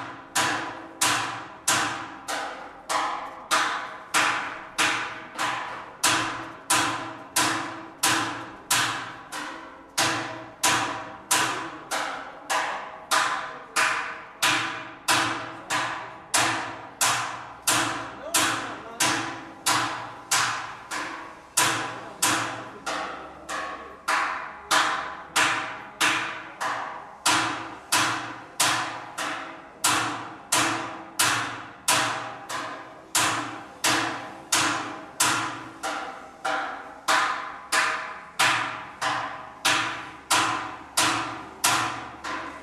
Sounds of unknown professions, coppersmith
Professions only existing in the backyards of Istanbuls smallest streets, we hear, the coppersmith, thinning the metal of a giant kettle.
22 October 2010, ~18:00